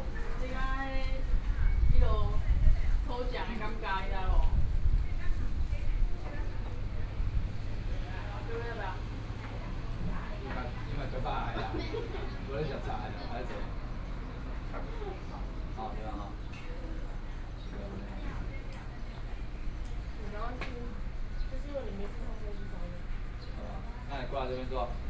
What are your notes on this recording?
Bun shop, Tourists, Traffic Sound, The weather is very hot